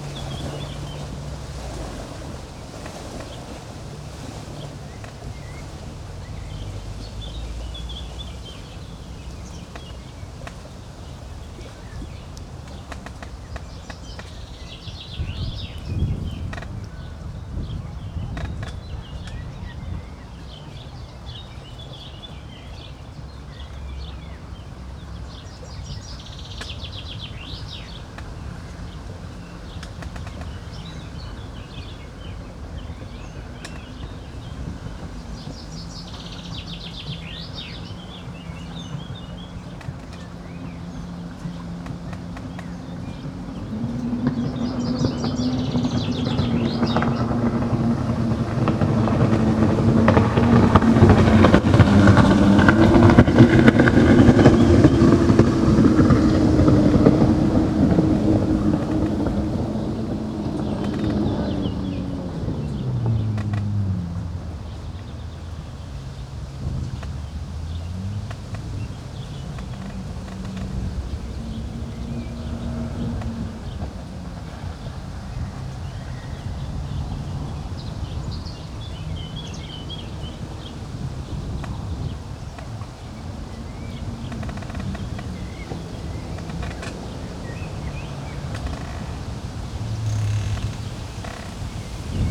Drava River walk, Maribor, Slovenia - creaking tree and jetski
i stopped to record a creaking tree just above the riverside path, but the recording was quickly dominated by a jetskier flying back and forth and back and forth under the nearby bridge